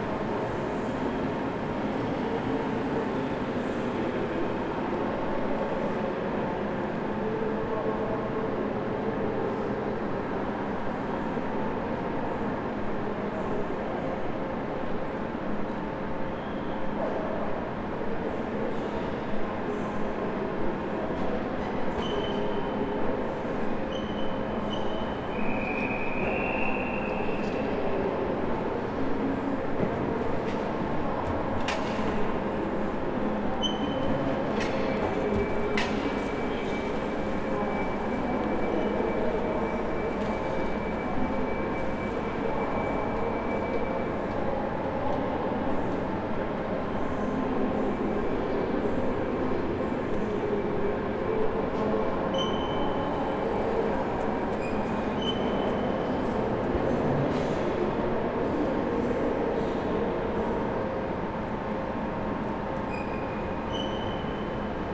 Airport Trainstation, Frankfurt am Main, Deutschland - Hall with many echoes
Entering the large hall of the „Fernbahnhof“ at Frankfurt Airport. The echos in this space have a kind of psychodelic effect. There are not many people, the ticket counter is open but very reduced...
Hessen, Deutschland, 24 April 2020, 16:43